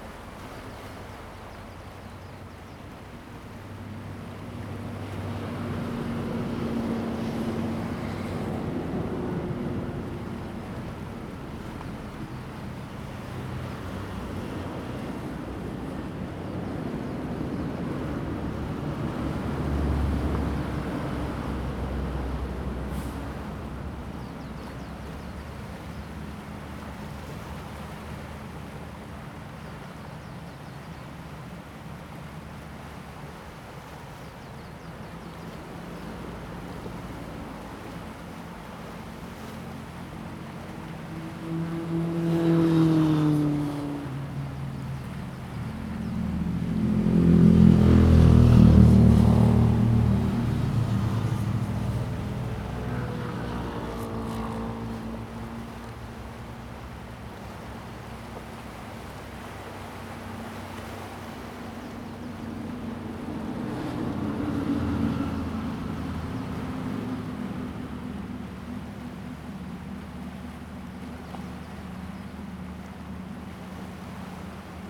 上楓港, Fangshan Township - on the coast
On the coast, traffic sound, Sound of the waves
Zoom H2N MS+ XY
Pingtung County, Taiwan